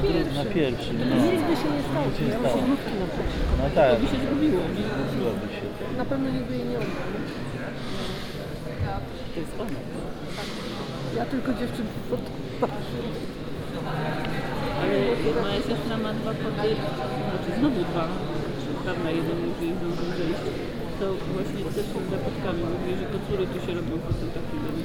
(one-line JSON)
{"title": "NOSPR, Katowice, Poland - (101 BI) Talks before concert at NOSPR", "date": "2017-03-05 18:50:00", "description": "Binaural recording of a crowd gathering before contemporary music concert at NOSPR.\nRecorded with Soundman OKM on Sony PCM D100", "latitude": "50.26", "longitude": "19.03", "altitude": "267", "timezone": "Europe/Warsaw"}